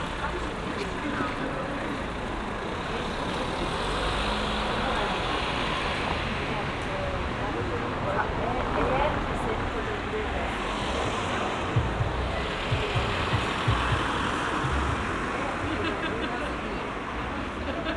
Berlin: Vermessungspunkt Friedelstraße / Maybachufer - Klangvermessung Kreuzkölln ::: 26.08.2012 ::: 01:59
Berlin, Germany, 26 August 2012